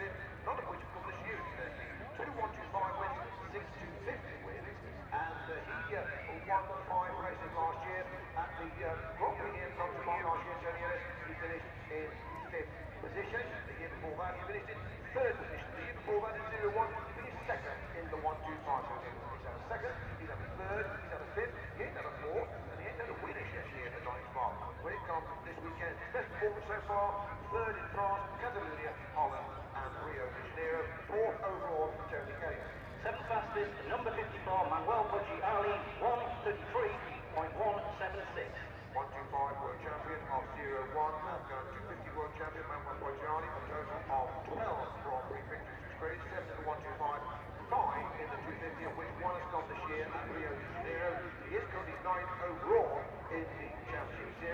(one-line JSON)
{"title": "Unnamed Road, Derby, UK - British Motorcycle Grand Prix 2004 ... 250 race ...", "date": "2004-07-25 11:30:00", "description": "British Motorcycle Grand Prix 2004 ... 250 race ... one point stereo mic to mini-disk ... commentary ...", "latitude": "52.83", "longitude": "-1.37", "altitude": "74", "timezone": "Europe/London"}